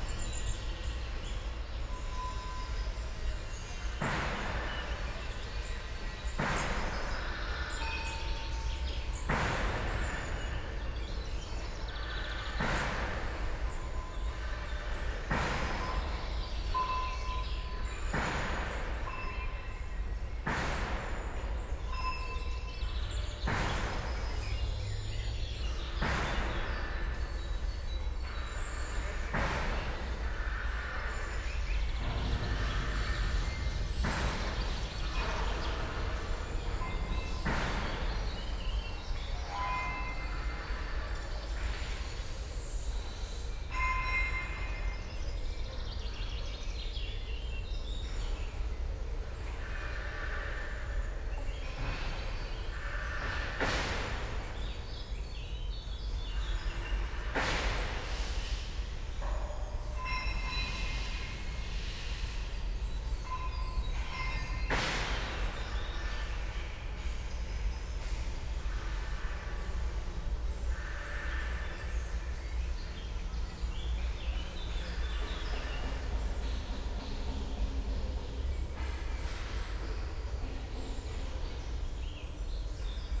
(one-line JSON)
{"title": "Park Górnik, Siemianowice Śląskie - metal workshop / factory", "date": "2019-05-22 09:35:00", "description": "at the edge of park Górnik, sounds from a nearby factory or metal workshop\n(Sony PCM D50, DPA4060)", "latitude": "50.31", "longitude": "19.01", "altitude": "286", "timezone": "Europe/Warsaw"}